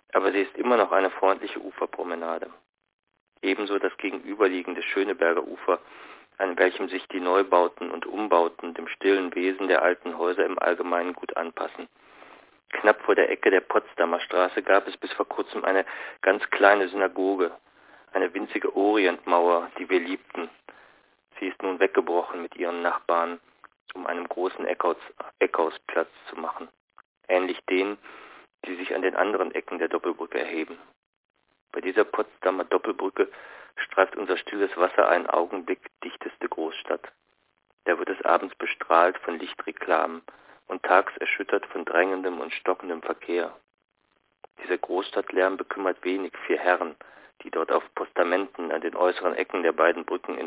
Der Landwehrkanal (4) - Der Landwehrkanal (1929) - Franz Hessel